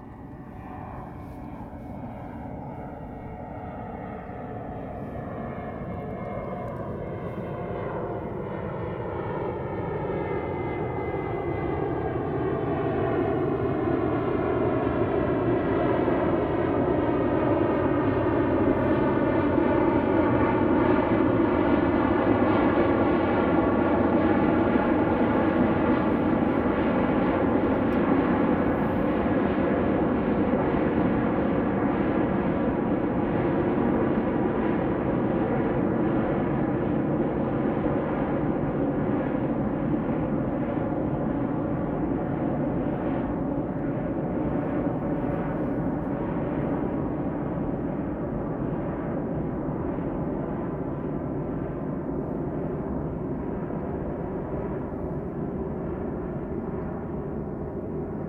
虎頭山, Huxi Township - Aircraft flying through
Aircraft flying through, On the coast
Zoom H2n MS +XY